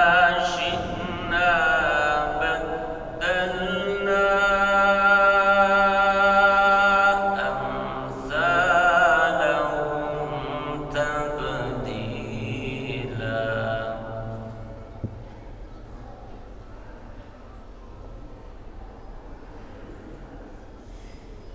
Sultanahmed Camii, Istanbul - Quran recitation
Istanbul, Turkey, 5 September 2010